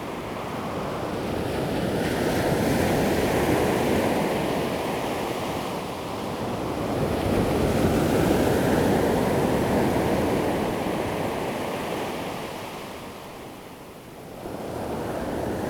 Taitung City, Taiwan - At the Beach
sound of the waves, At the seaside, Beach
Zoom H2n MS+XY